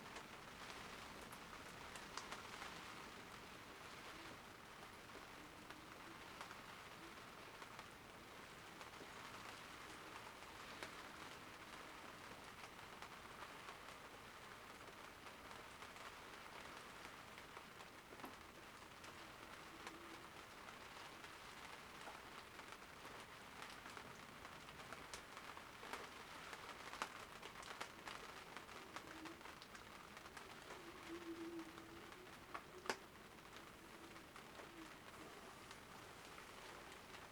workum, het zool: marina, berth h - the city, the country & me: thunderstorm
short thunderstorm with heavy rain
the city, the country & me: july 1, 2011
Workum, The Netherlands, 1 July